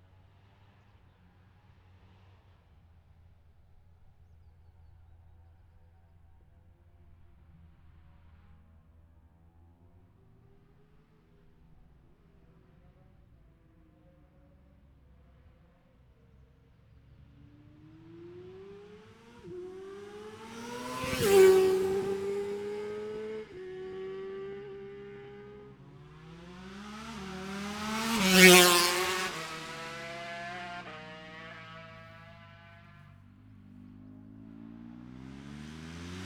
Scarborough, UK - motorcycle road racing 2017 ... lightweights ...
Ultra lightweight practice ... 125 ... 250 ... 400 ... two strokes / four strokes ... Bob Smith Spring Cup ... Olivers Mount ... Scarborough ... open lavalier mics clipped to sandwich box ...
22 April 2017, 10:49